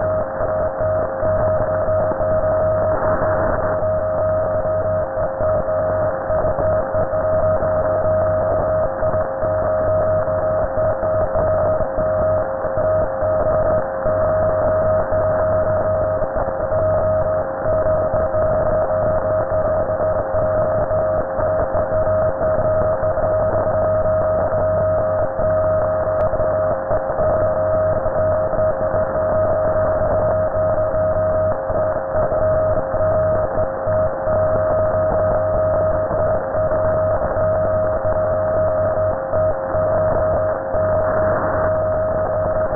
Puerto Percy, Magallanes y la Antártica Chilena, Chile - storm log - radiostorm highwire II
radiostorm, statics 145.2581MHz, Nooelec SDR + upconverter at highwire (looped 5 times)
This is part of a series of recordings, shifting to another frequency spectrum. Found structures, mainly old cattle fences and unused telephone lines are used as long wire antennas wit a HF balun and a NESDR SMArt SDR + Ham It Up Nano HF/MF/NF upconverter.